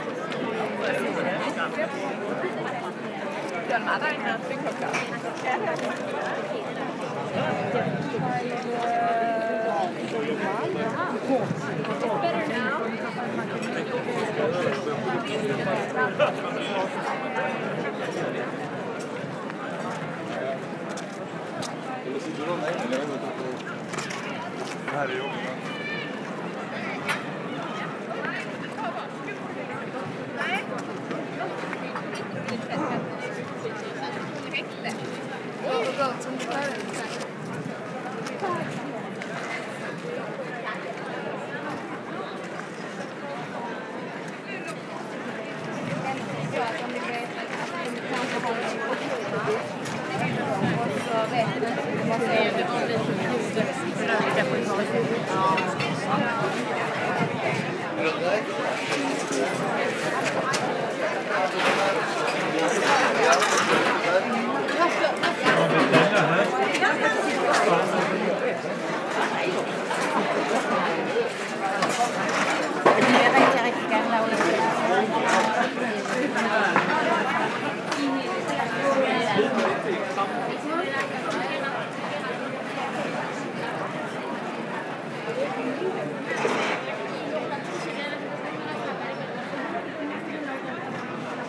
Malmö, Sweden - Malmö restaurants
Making a short walk on one of the main square of Malmö, sound of the restaurants, during a very shiny day off.
17 April 2019, 13:35